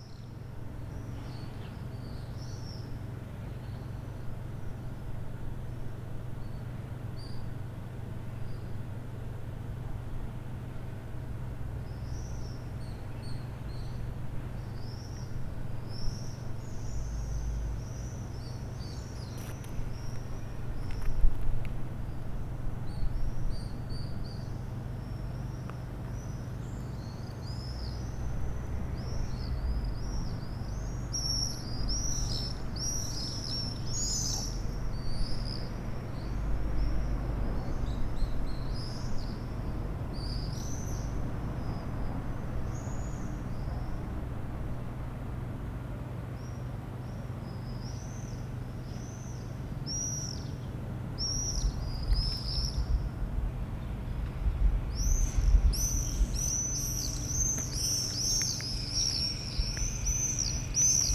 Rue Vaugelas, Aix-les-Bains, France - Les martinets
Le ballet des martinets au dessus des toits d'Aix-les-bains depuis la cour de la MJC un dimanche matin.